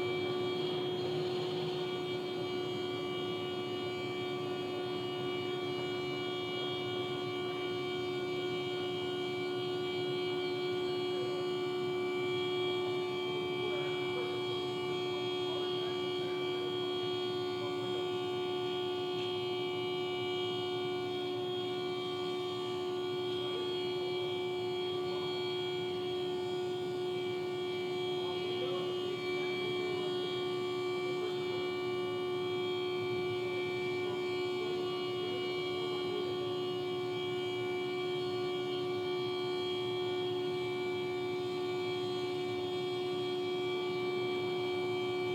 Ave, Queens, NY, USA - Unintentional Horn-Drone Performance
The sound of a car's horn parked nearby. The horn sounded for at least 3 to 4 hours, waking up the surrounding neighborhood.
United States, 26 March 2022, 01:30